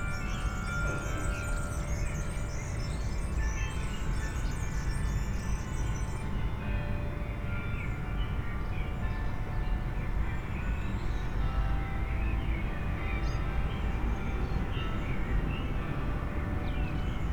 at the open window, second floor, Hotel Parc Belle Vue, Luxembourg. Birds, distant city sounds, some bells can be heard, and a constant traffic hum.
(Olympus LS5, Primo EM172)